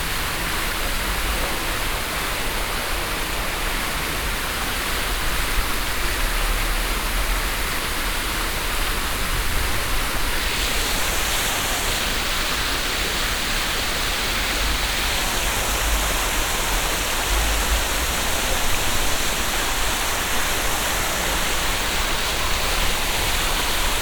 big old sparkling sculpture fountain on square place
international cityscapes - social ambiences and topographic field recordings